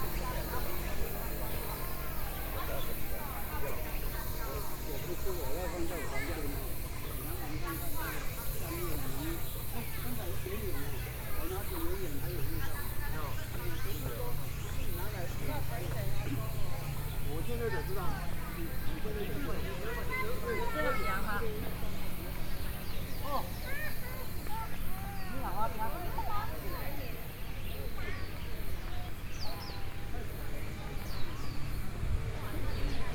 Birdsong, Gradually go down, Sony PCM D50 + Soundman OKM II
Taoyuan County, Taiwan, September 2013